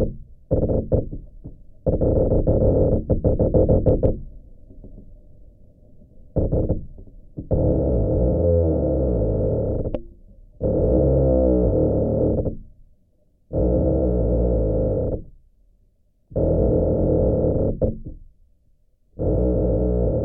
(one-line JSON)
{"title": "Utena, Lithuania, another squeaking tree", "date": "2021-04-13 15:50:00", "description": "my obsession with trees continues. another squeaking pine tree. this time the sound is produced not by two rubbing trees but by a single pine tree with two tops. first part of recording: geophone. second part: small omni", "latitude": "55.52", "longitude": "25.61", "altitude": "122", "timezone": "Europe/Vilnius"}